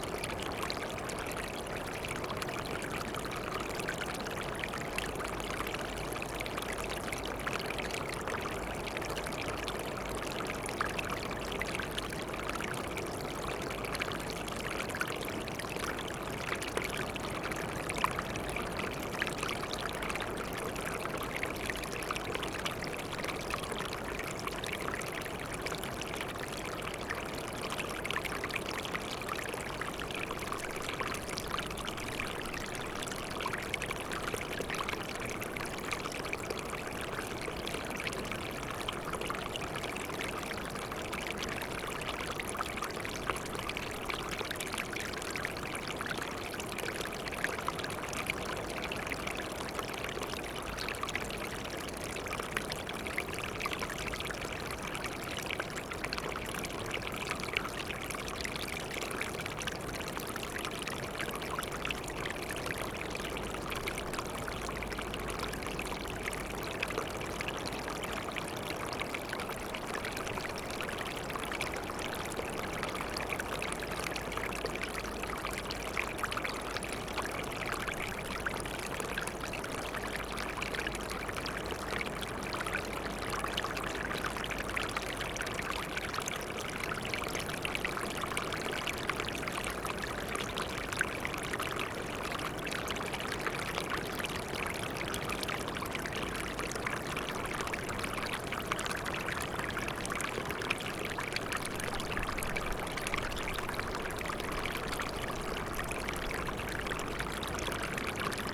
Whitby, UK - waterfall ette ...
Water running over a small ledge into a rock pool ... under Whitby East Cliffs ... open lavalier mics on mini tripod ... bird calls ... herring gull ...